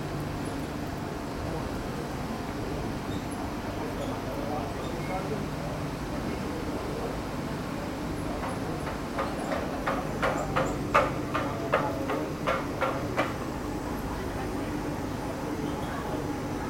mannheim, main station, railroad traffic
recorded june 28th, 2008, around 10 p. m.
project: "hasenbrot - a private sound diary"
Mannheim, Germany